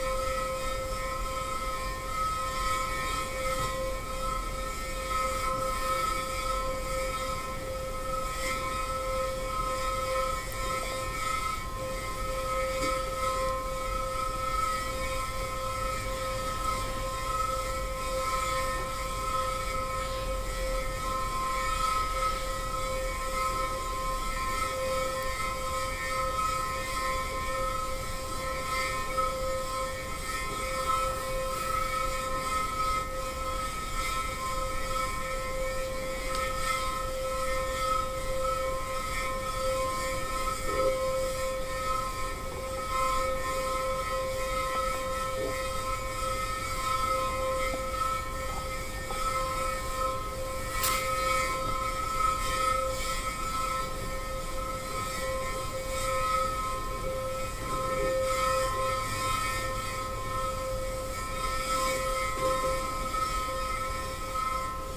'playing' old farm equiment found in the woods - KODAMA session
Hitoshi Kojo 'playing' a found object located in the woods next to La Pommerie. Recorded during KODAMA residency August 2009